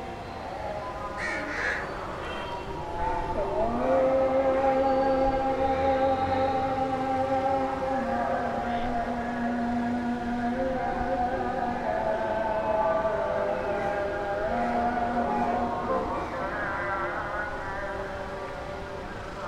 Bath Island, Karachi, Pakistan - Evening Azhan and Birds on the roof of the guest house
Evening Azhan and Birds on the roof of a guest house in Bath Island, Karachi.
Recorded using a Zoom H4N